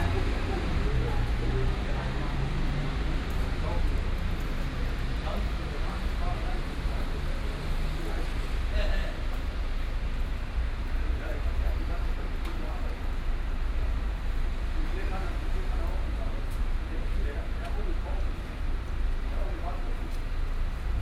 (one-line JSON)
{"title": "Löhrrondell, Löhrcenter, Koblenz, Deutschland - Löhrrondell 7", "date": "2017-05-19 15:00:00", "description": "Binaural recording of the square. Seventh of several recordings to describe the square acoustically. People on the phone, in front of a shop window next to a shopping mall. Rainy day, car sounds.", "latitude": "50.36", "longitude": "7.59", "altitude": "76", "timezone": "Europe/Berlin"}